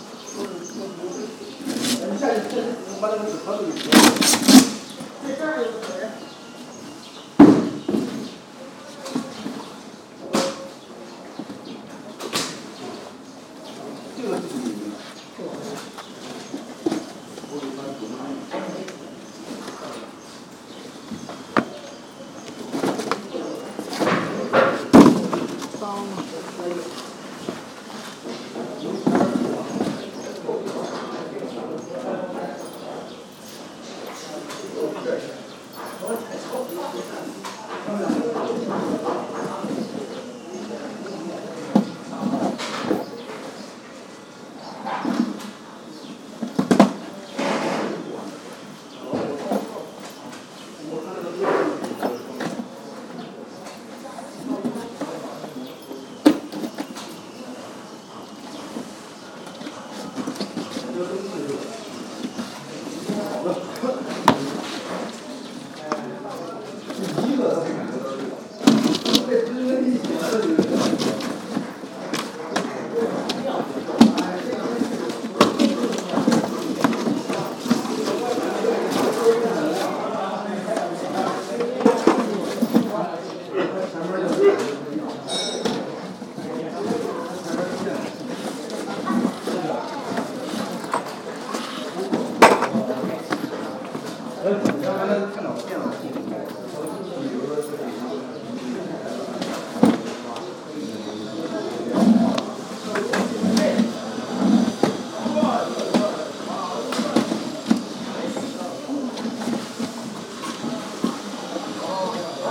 White cloud temple, Bai Yun Guan Jie, Xicheng Qu, Beijing Shi, China - Coups de bol au chantier : tribute to Eric La casa
Mixture of Tibetan bowl and Work in Progress sounds in a Taoist temple. Between a sacred and profane music, something in between, between listening and not listening. recorder : pcm-10 Sony